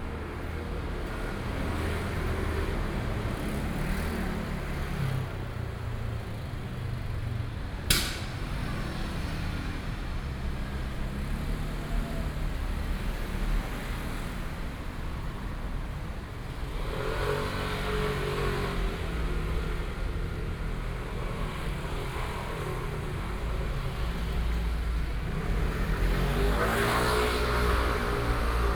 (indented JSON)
{
  "title": "Nong'an St., Taipei City - In the corner of the street",
  "date": "2014-02-28 18:25:00",
  "description": "In the corner of the street, Traffic Sound, Walking in the street, Walking towards the west direction\nPlease turn up the volume a little\nBinaural recordings, Sony PCM D100 + Soundman OKM II",
  "latitude": "25.06",
  "longitude": "121.53",
  "timezone": "Asia/Taipei"
}